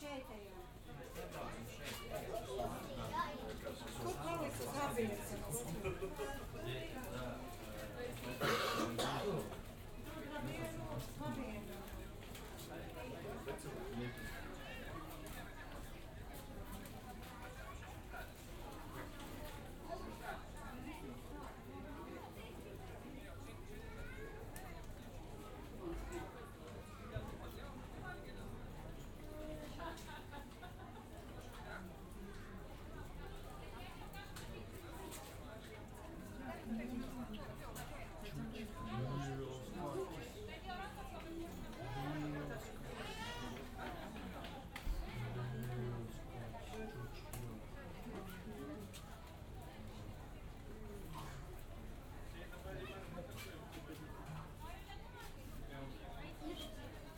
{"title": "Rīga, Latvia, zoo, at tigers place", "date": "2022-08-13 13:45:00", "description": "watching tigers in zoo. sennheiser ambeo smart headset", "latitude": "57.01", "longitude": "24.16", "altitude": "12", "timezone": "Europe/Riga"}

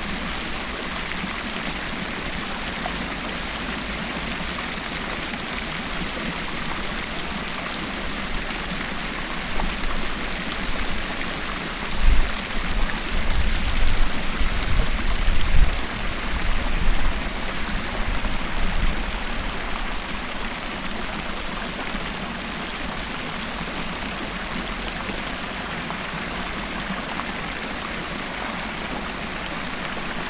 {"title": "dresden, hauptstr, small classical fountain", "date": "2009-06-16 14:46:00", "description": "small classical fountain in the marketing zone\nsoundmap d: social ambiences/ listen to the people - in & outdoor nearfield recordings", "latitude": "51.06", "longitude": "13.74", "altitude": "117", "timezone": "Europe/Berlin"}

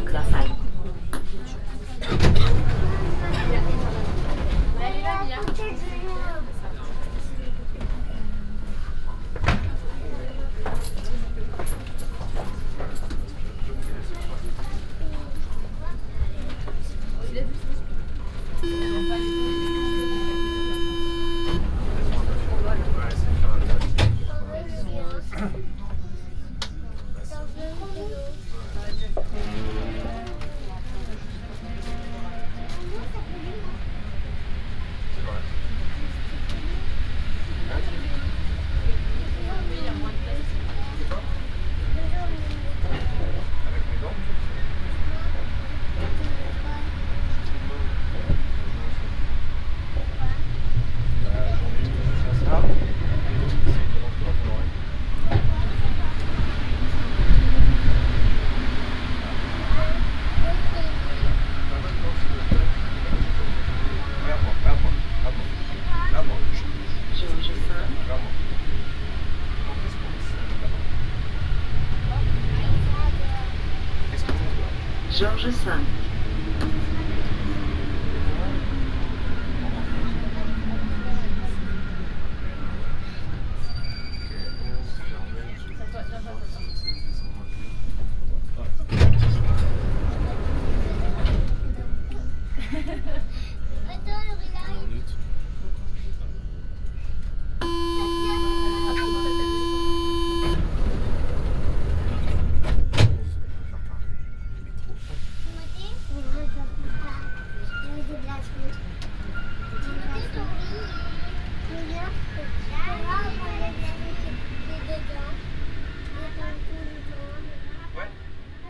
{"title": "Metro Port Maillot, Paris, France - (581 BI) Metro ride Port Maillot -> Georges V", "date": "2019-08-15 11:35:00", "description": "Binaural recording of a metro ride from Port Maillot to Georges V (line 1).\nRecorded with Soundman OKM on Sony PCM D100.", "latitude": "48.88", "longitude": "2.28", "altitude": "38", "timezone": "Europe/Paris"}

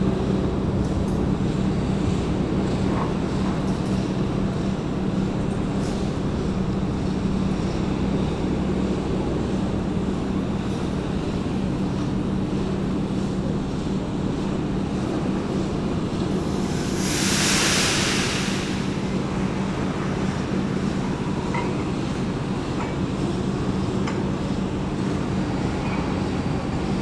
{"title": "Charleroi, Belgium - Industrial soundscape", "date": "2018-08-15 09:42:00", "description": "Industrial soundscape near the Thy-Marcinelle wire-drawing plant, a worker moving an enormous overhead crane, and charging rolls of steel into an empty boat.", "latitude": "50.41", "longitude": "4.43", "altitude": "104", "timezone": "GMT+1"}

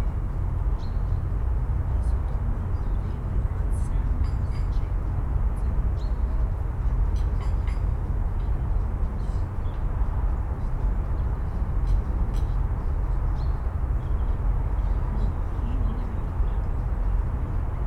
{"title": "Tivoli park, Ljubljana - city hum", "date": "2012-11-08 11:40:00", "description": "deep city traffic hum heard from the terrace of Tivoli castle, around noon.\n(Sony PCM D50, DPA4060))", "latitude": "46.05", "longitude": "14.49", "altitude": "319", "timezone": "Europe/Ljubljana"}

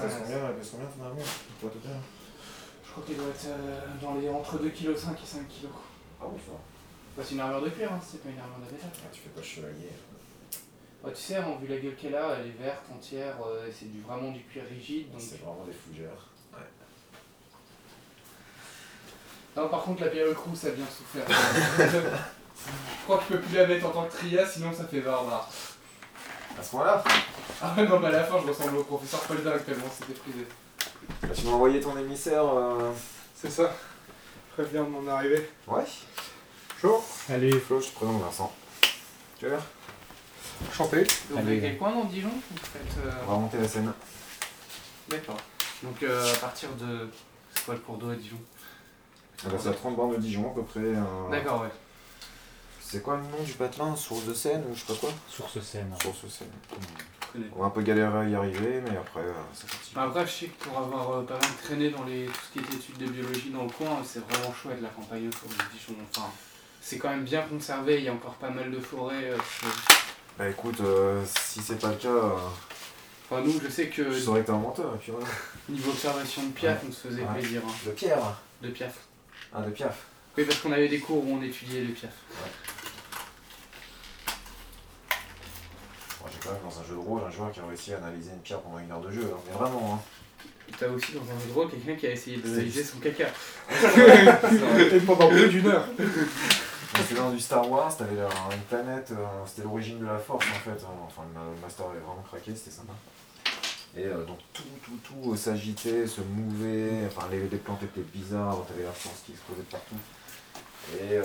{"title": "Sens, France - My brother home", "date": "2017-07-28 21:30:00", "description": "We are in my brother home, a charming apartment in a longhouse. On this evening, some friends went, they are discussing about Role-Playing ; my brother lend some games. I think it's a representative sound of this place.", "latitude": "48.19", "longitude": "3.28", "altitude": "72", "timezone": "Europe/Paris"}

